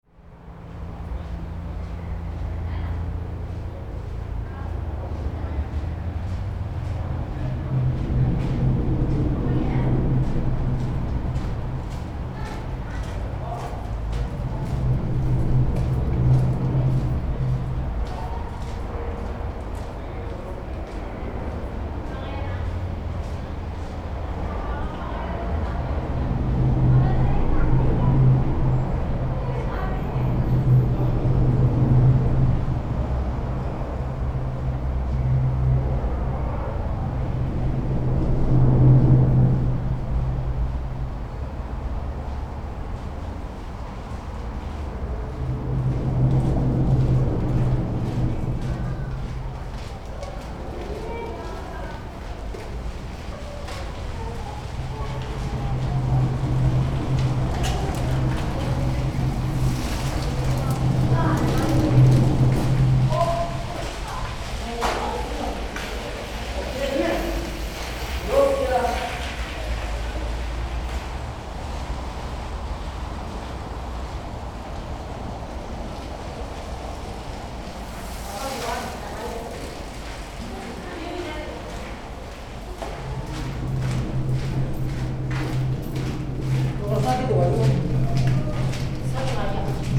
under the bridge, pedestrians, cyclists, rythmic sound of cars from above
22 August 2009, 16:50